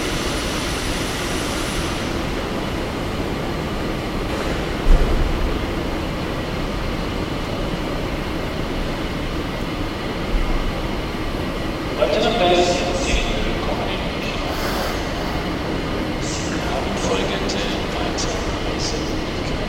munich main station, hall - Munich main station, hall
recorded june 6, 2008.- project: "hasenbrot - a private sound diary"
Munich, Germany